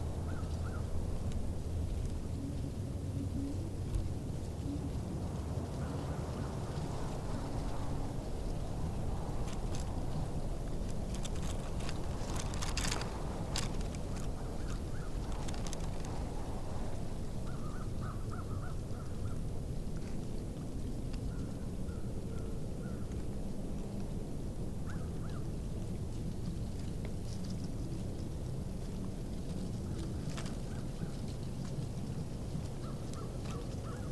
Warren Landing Rd, Garrison, NY, USA - Tidal Wetland, Hudson River Estuary
Soundscape recorded at the Constitution Marsh Audubon Center and Sanctuary trail located on the east side of the Hudson River.
This tidal marsh is a vital natural habitat for many species of wildlife and is a significant coastal fish habitat and a New York State bird conservation area.